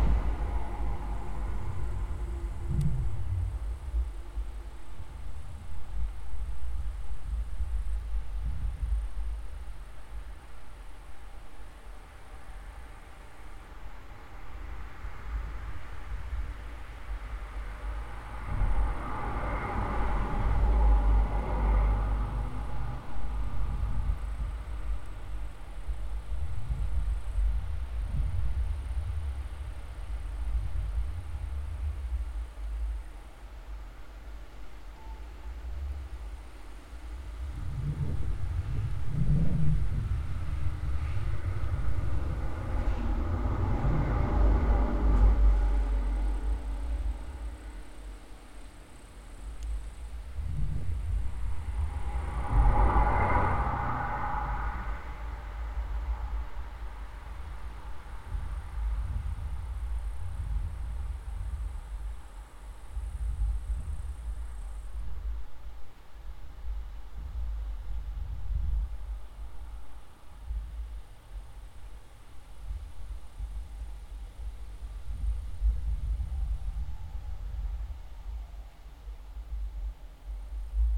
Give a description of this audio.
conventional omni mics plus contact mic